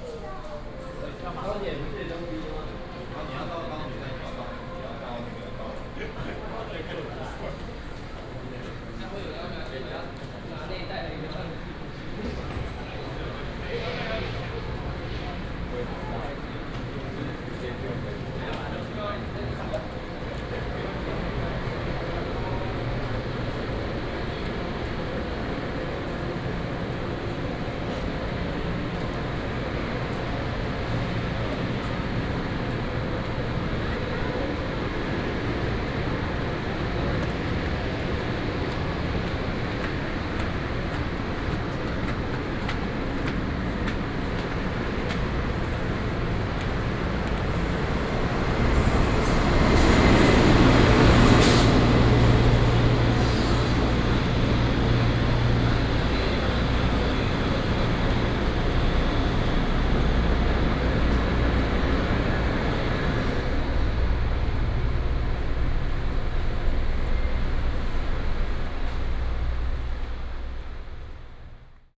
Shalu Station, Shalu District - In the station
From the station hall to the platform